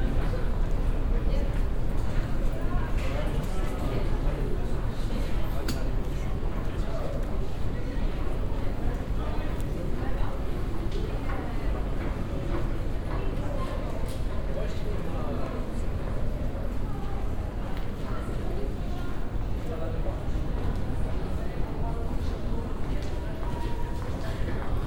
paris, gare de lyon, travellers and ticket machines
ticket machines been used in heavy traffic in the early afternoon, a walk thru to the subway platform
cityscapes international: socail ambiences and topographic field recordings